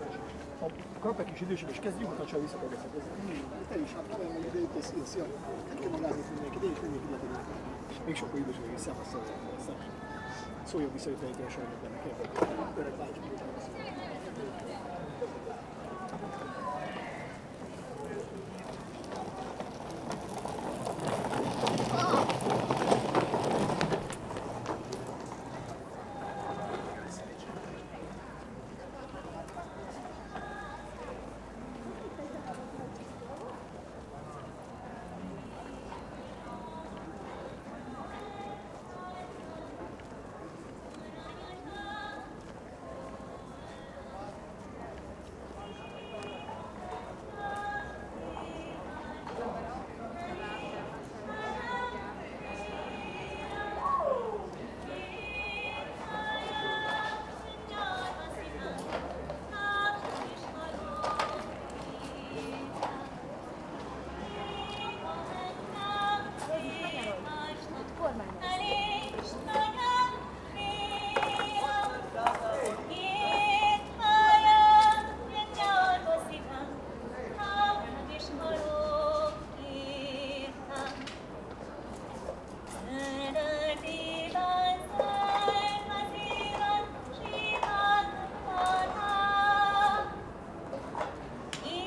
Buda Castle
Impressions of autumn tourism in Budapest. From the chestnut vendour via a guided tour in spanish to the hungarian folk singer under the colonnades.